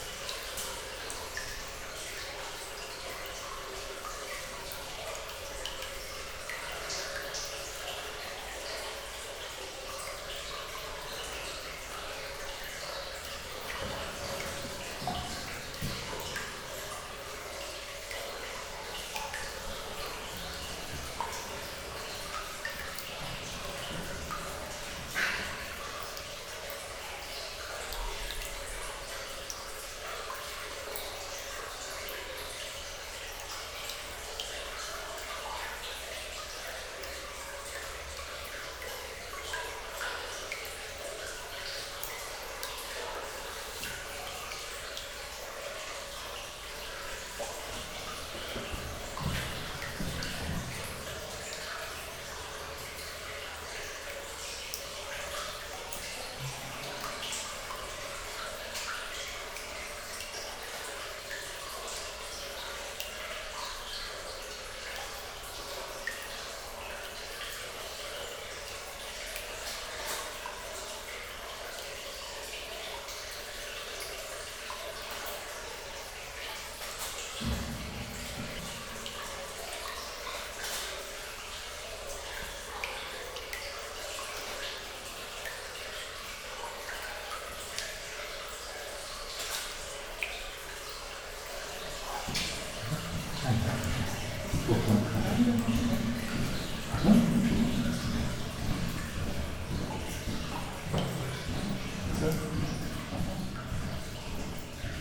Montagnole, France - Walking in the mine
We are walking into the underground abandoned cement mine. In this old tunnel, there's water flowing and a large reverb.
June 2017